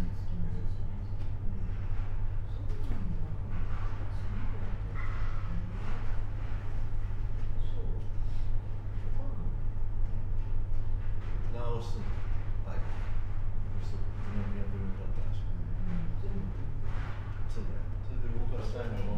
koishikawa korakuen gardens, tokyo - enjoying afterwards
wooden restaurant atmosphere with a kimono dressed lady